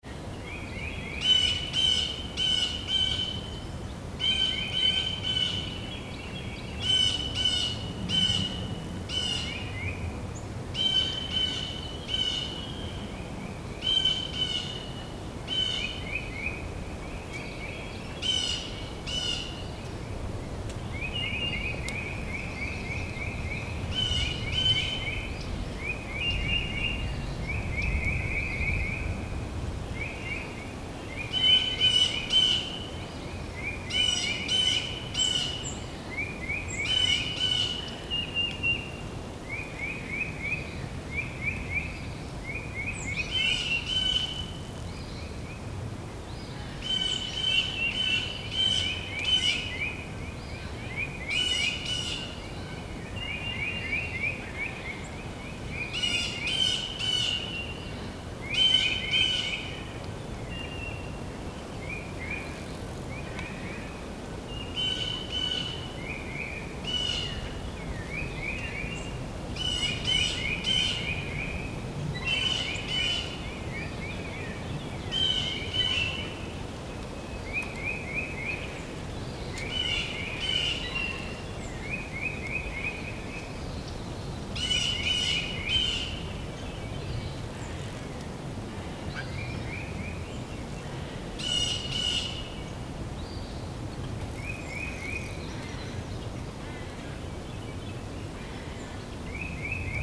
A small forest during the spring in Missouri. Some birds are singing. Sound recorded by a MS setup Schoeps CCM41+CCM8 Sound Devices 788T recorder with CL8 MS is encoded in STEREO Left-Right recorded in may 2013 in Missouri, USA.
MO, USA, 7 May